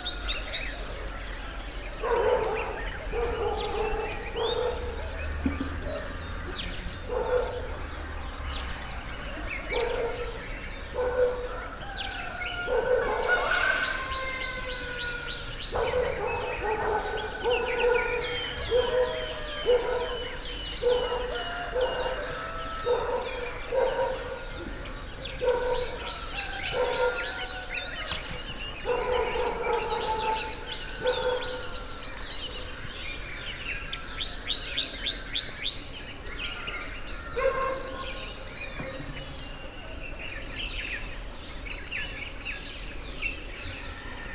Mission school guest house, Chikankata, Zambia - early morning Chikankata
listening to morning bird song fading while daily life picking up around the guest house....
Southern Province, Zambia, 5 September